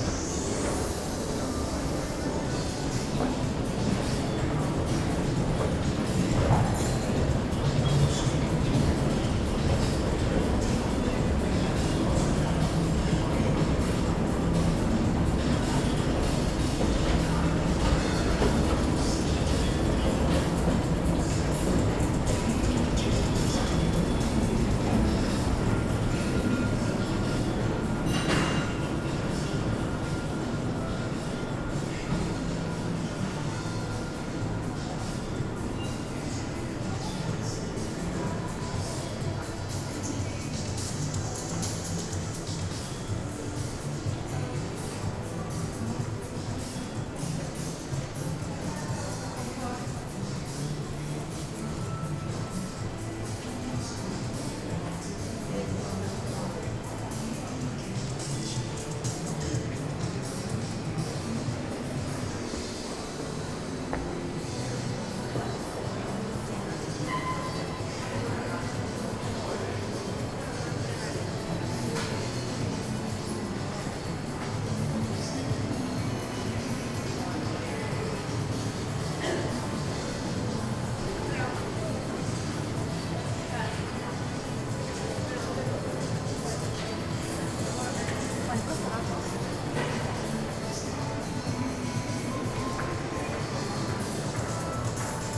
Tallinn, Viru kesku shopping center
walking in viru kesku shopping mall on a sunday morning
Tallinn, Estonia